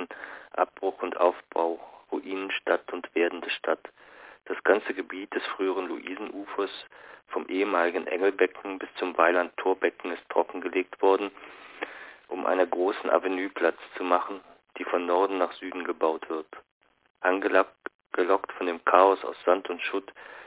Der Landwehrkanal (7) - Der Landwehrkanal (1929) - Franz Hessel
Berlin, Germany